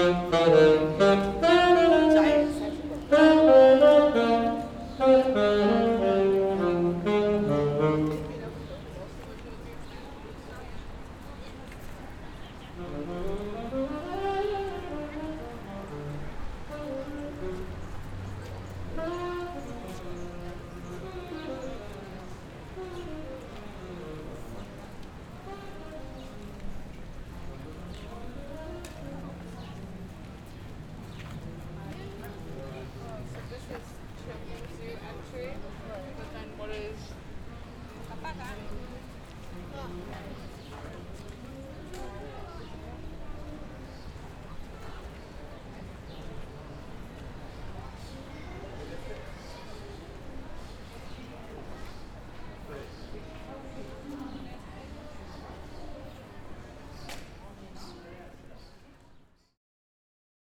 Saxophone player under Denesmouth Arch, Central Park.
New York, USA